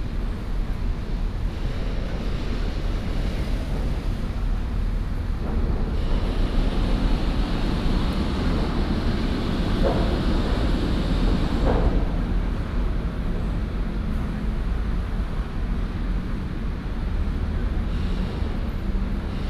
高雄車站, Sanmin Dist., Kaohsiung City - Construction sound
Outside the station, Construction sound, Traffic sound